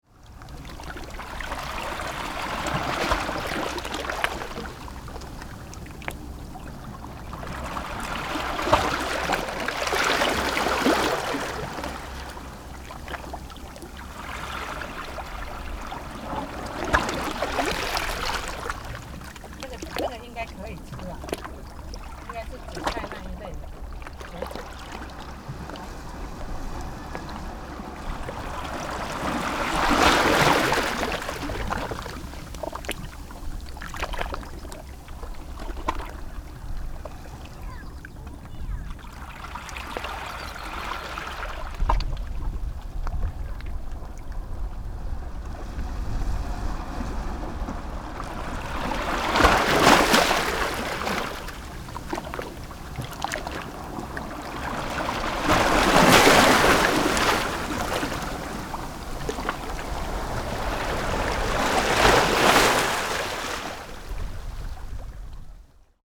tuman, Keelung - Waves
Sea water between the rocks, Sony PCM D50